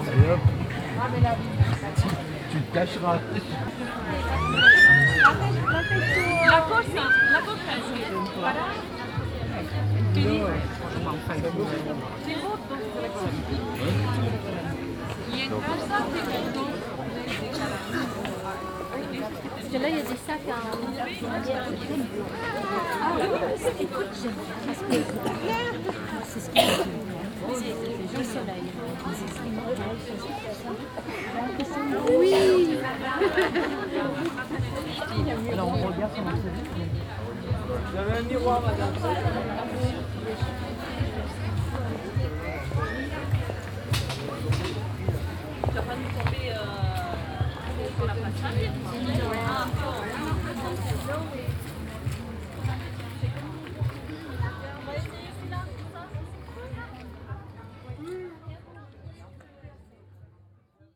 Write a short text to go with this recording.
On a small square during the weekly market of the village. The sound of church bells and the dense crowded market atmosphere. international village scapes - topographic field recordings and social ambiences